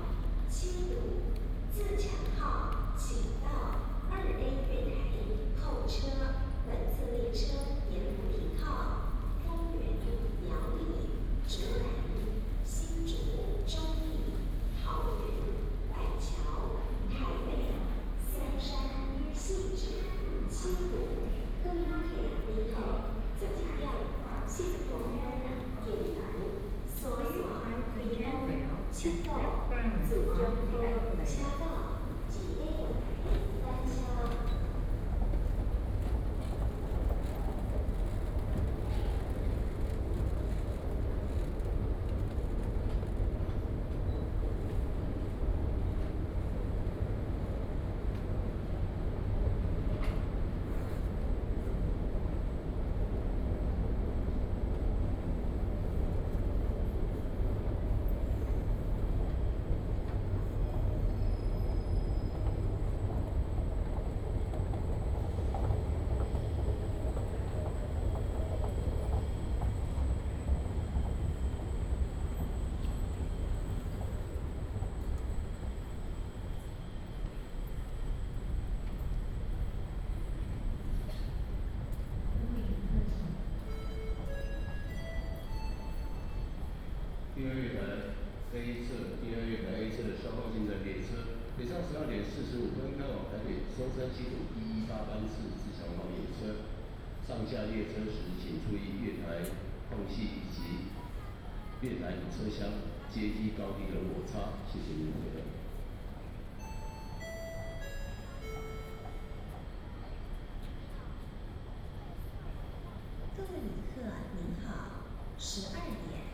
Fengyuan Station, Fengyuan District - At the station platform
At the station platform, Escalator, Station Message Broadcast, The train arrives